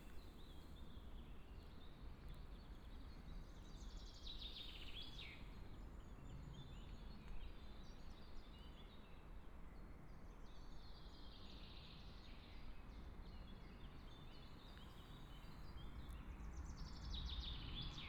Hohenkammer, Germany - Trees and birds
In the woods, birds, Traffic Sound
11 May 2014